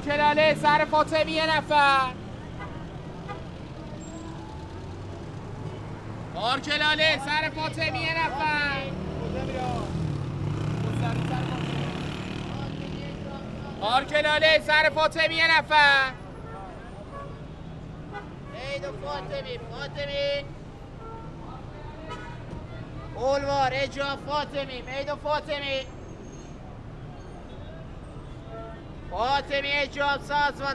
Tehran Province, Tehran, District, N Kargar, No., Iran - Taxi drivers asking for passengers